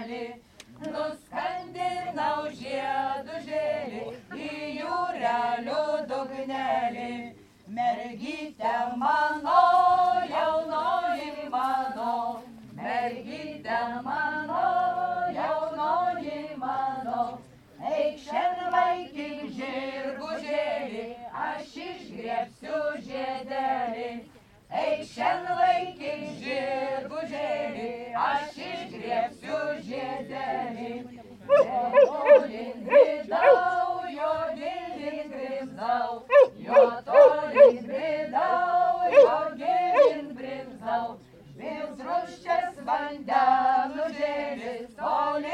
The celebration of new boat launching.